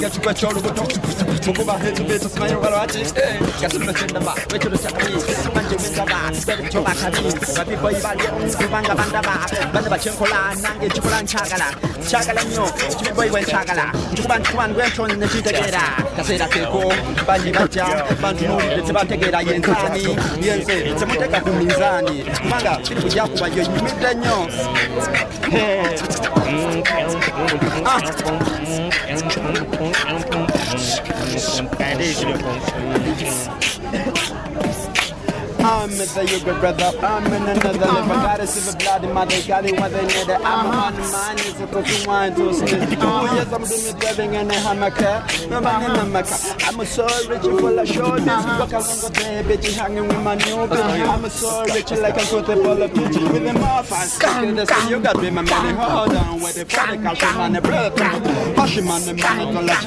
…after a day of making recordings with members of the Breakdance Project Uganda and its founding director, Abraham ‘Abramz’ Tekya, I catch up with a group “relaxing” in freestyle “beat boxing”…
Kampala, Uganda, 26 July